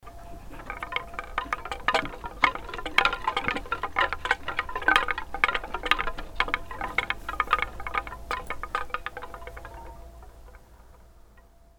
hoscheid, sound sculpture, tontotem
At the Hoscheid - Klangwanderweg - here the sound of a sound sculpure by Alan Johnston entitled Garten-Tontotem. The sculpture consists out of three wooden poles with wooden wongs attached that swing smaller wooden parts attached to them as the winds moves the wings.
more informations about the Hoscheid Klangwanderweg can be found here:
Hoscheid, Klangskulptur, Tontotem
Auf dem Hoscheid-Klangwanderweg - hier der Klang einer Tonskulptur von Alan Johnston mit dem Titel Garten-Tontotem. Die Skulptur besteht aus drei hölzernen Pfählen mit hölzernen Flügeln, die bei Wind kleinere Holzstücke bewegen.
Mehr Informationen über den Klangwanderweg von Hoscheid finden Sie unter:
Hoscheid, sculpture acoustique, Totem musical
Sur le Sentier Sonore de Hoscheid, le son de la sculpture acoustique du nom de Totem musical de jardin créée par Alan Johnston.